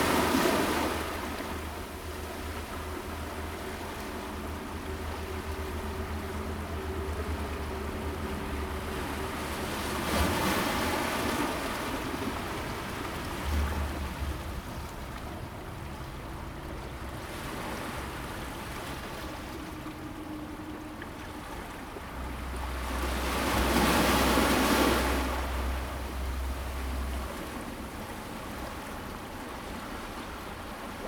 長潭里, Keelung City, Taiwan - the waves
Sound of the waves, On the coast
Zoom H2n MS+XY +Sptial Audio